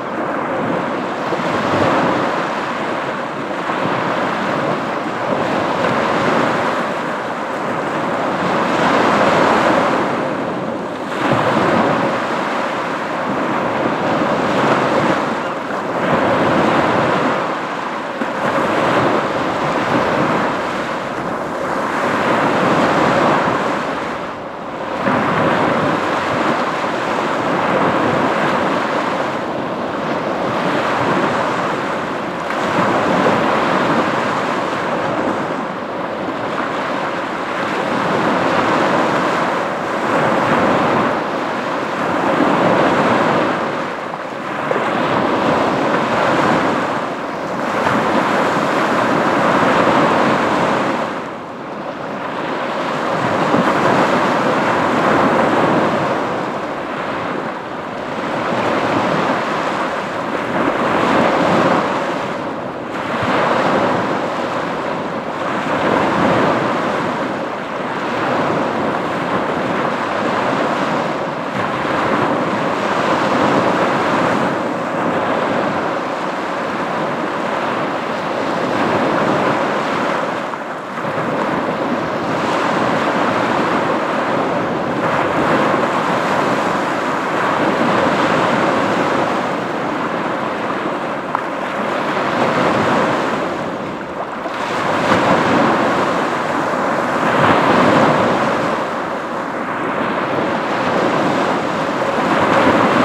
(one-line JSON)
{"title": "Wyspa Sobieszewska, Gdańsk, Poland - Morze ranek", "date": "2015-07-09 04:13:00", "description": "Morze ranek rec. Rafał Kołacki", "latitude": "54.35", "longitude": "18.87", "altitude": "16", "timezone": "Europe/Warsaw"}